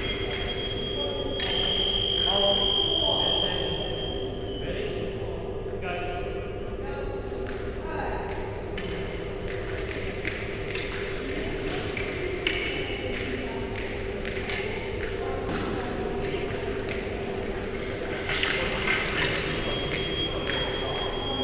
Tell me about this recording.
In the last day of fencing course, the use of contact alarms are introduced. Therefore you can hear several bouts going on simultaneously. Appears to build in intensity of combat/competition